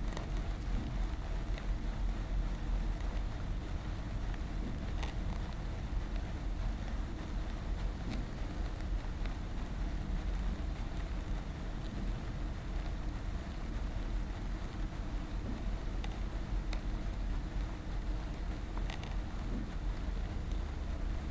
Woodbridge, UK - hose drag through sandy soil